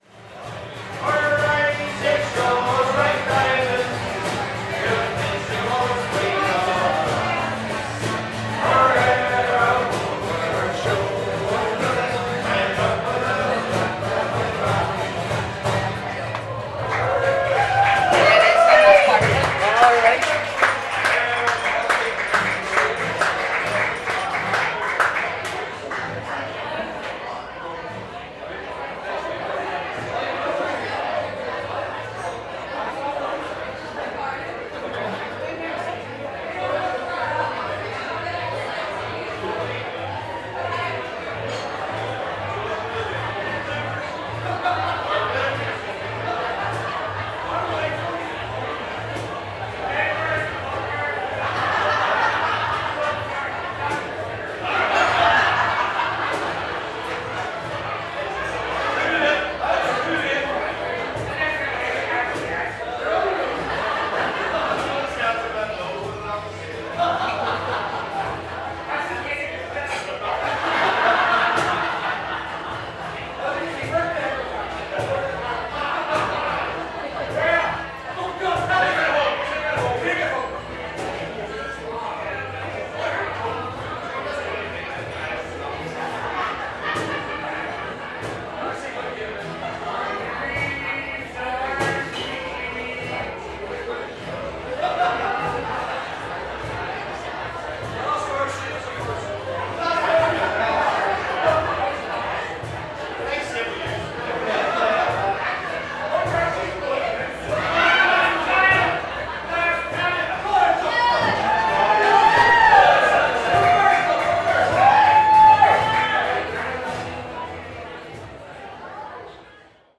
Joys Entry, Belfast, UK - The Entries
Recording of a cover band playing at Jailhouse, outdoor crowd, laughter, chatter, yells, passers-by, glassware, pub ambience, instruments, singing.
Ulster, Northern Ireland, United Kingdom, 27 March 2022, 17:31